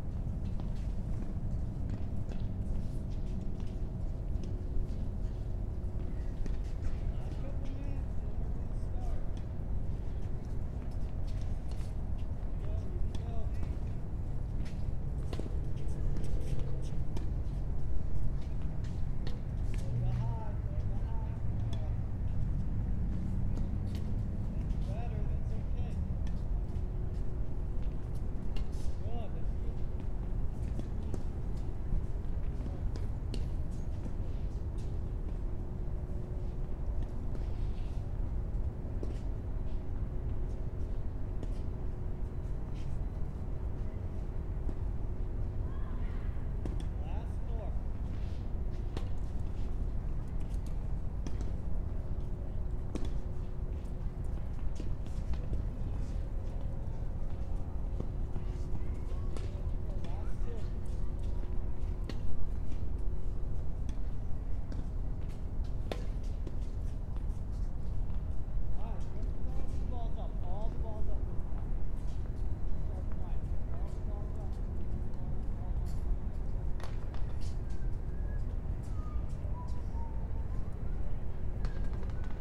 Manning Rd SW, Marietta, GA 30060 Marietta, GA, USA - Laurel Park - Tennis Lesson
A lesson in one of the tennis courts of Laurel Park. The recording was taken from a distance on a bench. Other sounds not related to the lesson can be heard from the surrounding area, such as from traffic, birds, and other sources that are more difficult to identify.
[Tascam Dr-100mkiii & Primo EM272 omni mics)
Cobb County, Georgia, United States, February 2021